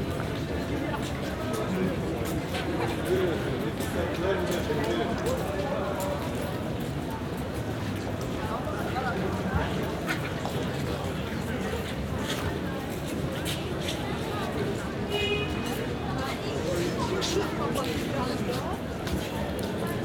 {"title": "Istanbul Soundscape, Sunday 13:05 Galata Tower - Istanbul Soundscape, Sunday 16:55 Galata Tower", "date": "2010-02-14 23:23:00", "description": "Istanbul ambient soundscape on a Sunday afternoon at the Galata Tower plaza, binaural recording", "latitude": "41.03", "longitude": "28.97", "altitude": "54", "timezone": "Europe/Tallinn"}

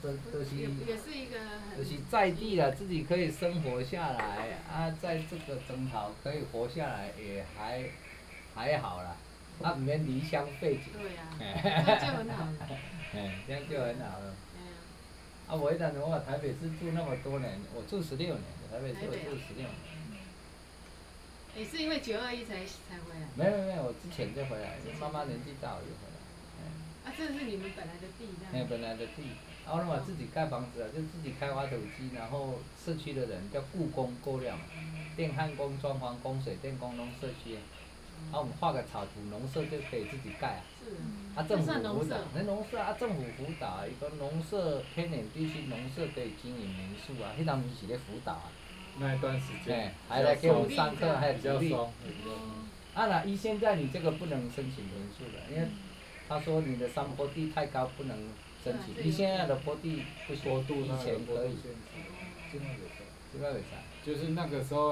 {"title": "Woody House, 埔里鎮桃米里 - Hostel owners Introduce himself", "date": "2015-09-02 19:17:00", "description": "Hostel owners Introduce himself, Frogs sound", "latitude": "23.94", "longitude": "120.92", "altitude": "495", "timezone": "Asia/Taipei"}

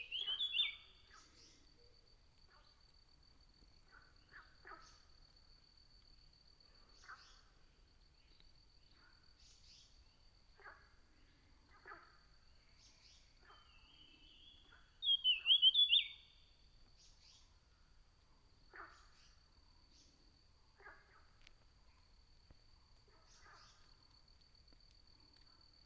{"title": "魚池鄉五城村三角崙, Taiwan - in the woods", "date": "2016-04-20 06:26:00", "description": "birds sound, Ecological pool, frogs chirping, in the woods", "latitude": "23.93", "longitude": "120.90", "altitude": "764", "timezone": "Asia/Taipei"}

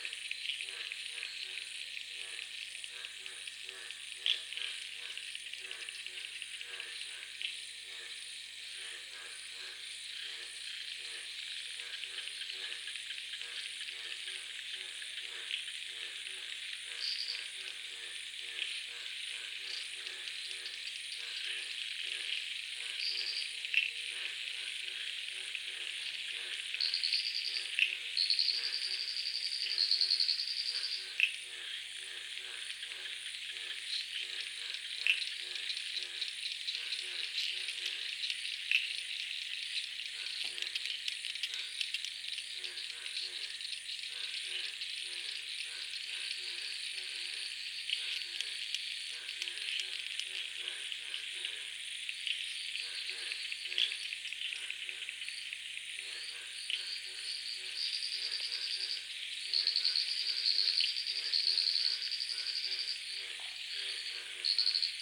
{"title": "Koforidua, Ghana - Suburban Ghana Soundscapes 3: the Pond", "date": "2022-04-22 04:00:00", "description": "A part of field recordings for soundscape ecology research and exhibition.\nRhythms and variations of vocal intensities of species in sound. Hum in sound comes from high tension cables running near the pond.\nRecording format: Binaural.\nRecording gear: Soundman OKM II into ZOOM F4.\nDate: 22.04.2022.\nTime: Between 00 and 5 AM.", "latitude": "6.05", "longitude": "-0.24", "altitude": "165", "timezone": "Africa/Accra"}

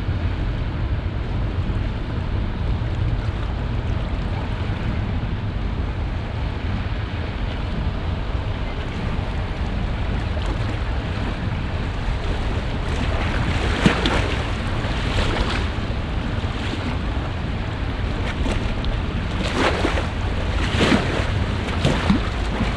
{"title": "monheim, rheindeich, zwei schiffe", "description": "vorbeifahrt zweier rheinschiffe bei hochwasser morgens\nsoundmap nrw:\nsocial ambiences, topographic field recordings", "latitude": "51.10", "longitude": "6.88", "altitude": "31", "timezone": "GMT+1"}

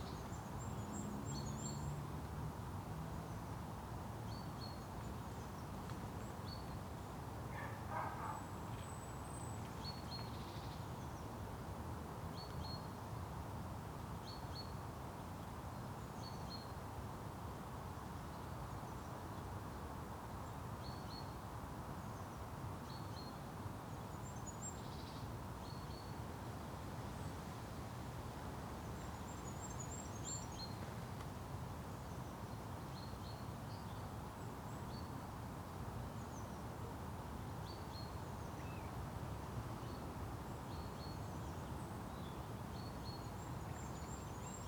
{"title": "Wentbridge, UK - Wentbridge birdsong", "date": "2015-02-21 11:12:00", "description": "There's an interesting bird song with a bit of variation which stops and starts. You can also hear some distant hunting gunshots, distant traffic, and occasionally dogs and people walking in the wood.\n(rec. zoom H4n)", "latitude": "53.66", "longitude": "-1.27", "altitude": "69", "timezone": "Europe/London"}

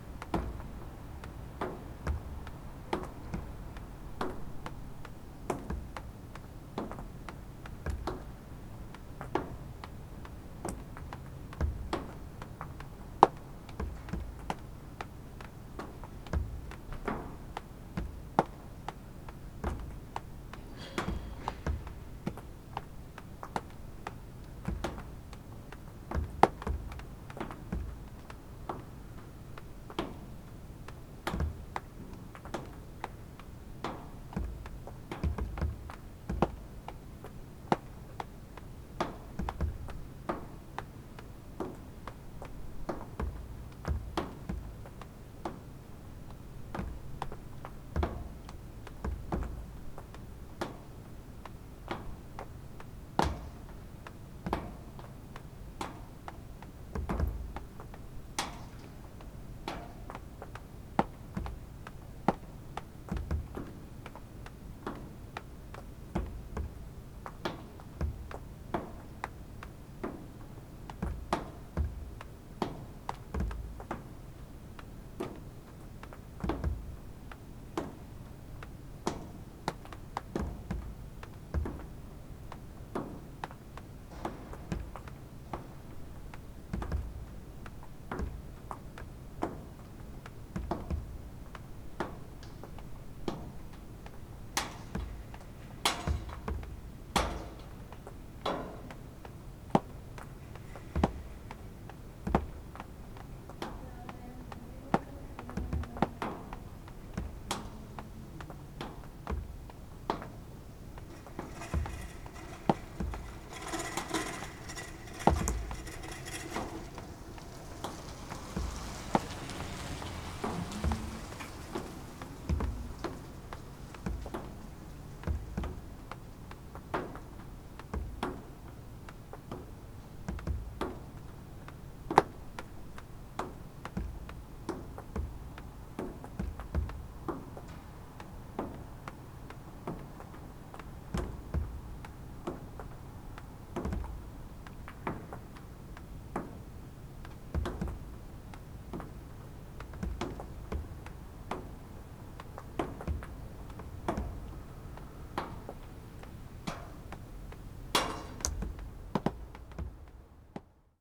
{"title": "berlin, friedelstraße: vor türkischem imbiss - the city, the country & me: in front of a turkish kofta takeaway", "date": "2012-04-25 02:07:00", "description": "rainwater dripping from the roof on an awning and a table of the takeaway\nthe city, the country & me: april 25, 2012\n99 facets of rain", "latitude": "52.49", "longitude": "13.43", "altitude": "43", "timezone": "Europe/Berlin"}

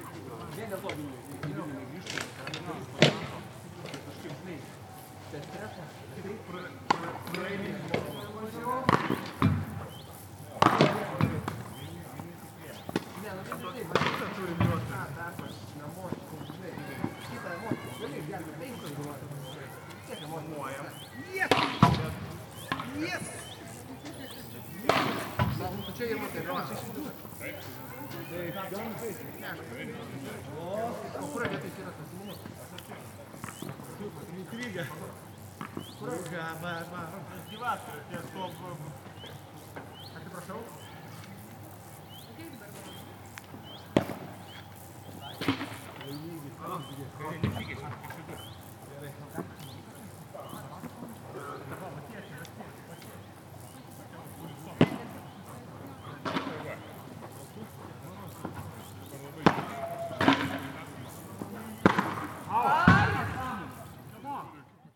{"title": "Neringos sav., Lithuania - Tennis court", "date": "2016-07-30 17:39:00", "description": "Recordist: Raimonda Diskaitė\nDescription: People playing tennis, kids in the background and insect sounds. The space had echo. Recorded with ZOOM H2N Handy Recorder.", "latitude": "55.31", "longitude": "21.00", "altitude": "11", "timezone": "Europe/Vilnius"}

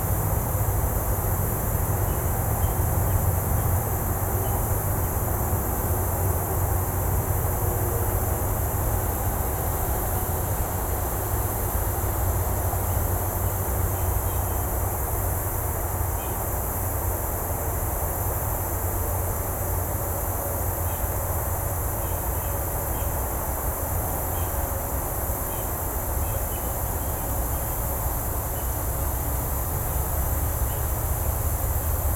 {"title": "Route 66 Times Beach, Eureka, Missouri, USA - Route 66 Times Beach", "date": "2020-09-13 15:12:00", "description": "Route 66 State Park is a recreational area at the site of the Times Beach ghost town. Times Beach was abandoned by its residents in the 1980s after it was discovered to be contaminated by the hazardous chemical dioxin that had been sprayed on its dirt streets in waste oil to keep the dust down. The ground was incinerated and it was taken off the Superfund hazardous site list. The area was then made into a park commemorating historical U.S. Route 66 that passed by the town. Recording was made in a forested area of the park but there was still a continuous traffic drone from nearby Interstate Highway 44. A train passes and sounds its horn at 2:02.", "latitude": "38.51", "longitude": "-90.61", "altitude": "141", "timezone": "America/Chicago"}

